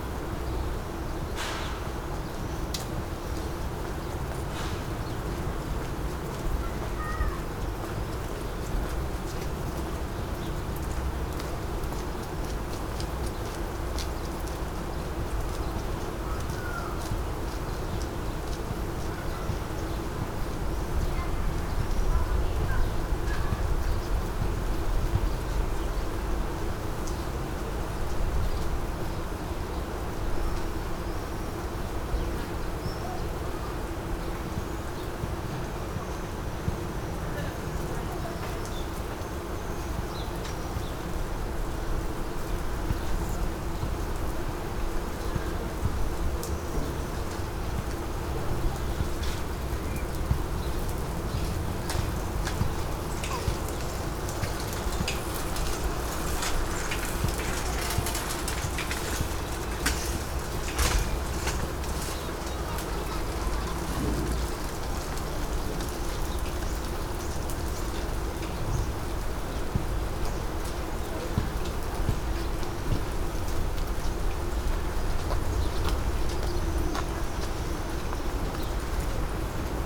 Poznan, Sobieskiego housing estate - linden tree
a linden tree with a swarm of bees and bumblebees buzzing and collecting nectar.